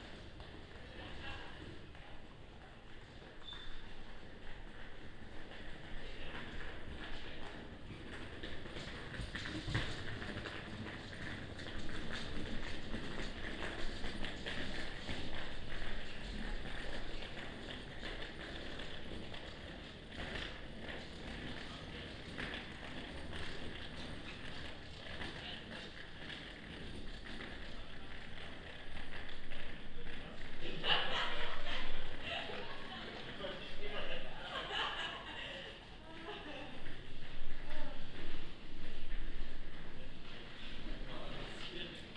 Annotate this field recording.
Mittelalterliche Gasse mit Fachwerkhäusern, Fußgängerzone.